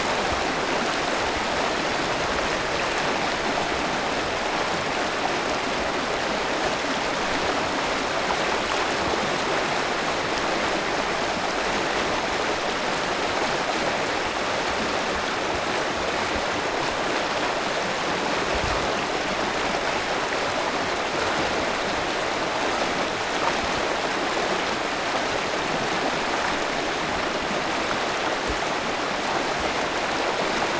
Olsztyn, Łyna, Kamienny most - Lyna river